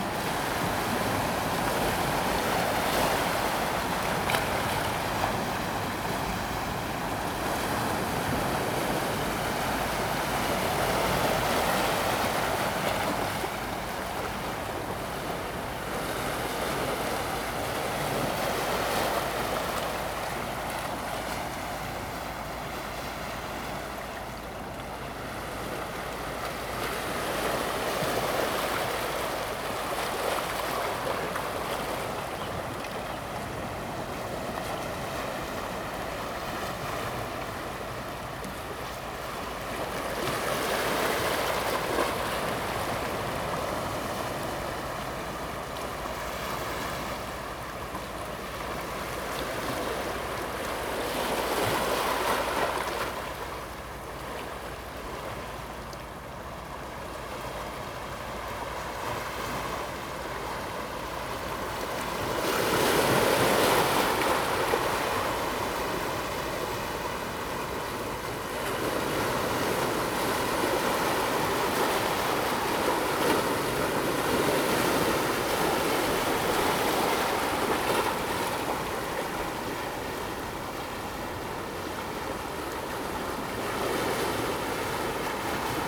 外木山濱海風景區, Keelung City - Rocky and the waves
sound of the waves, Rocky
Zoom H2n MS+XY +Sptial Audio